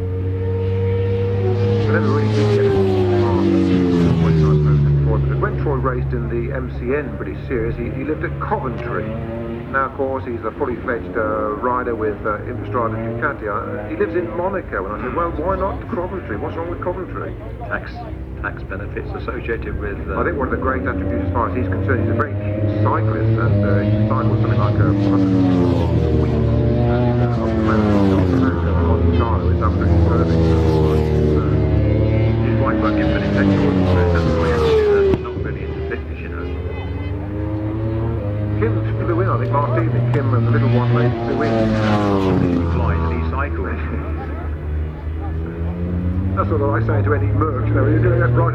World Superbikes 2002 ... WSB free-practice contd ... one point stereo mic to mini-disk ... date correct ... time probably not ...